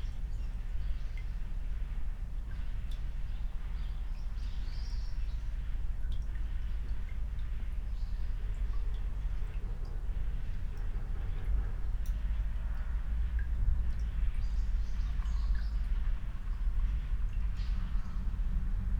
Mariánské Radčice, Tschechische Republik - under bridge, village ambience, water flow
listening to the village from below the bridge, light water flow (Sony PCM D50, Primo EM172)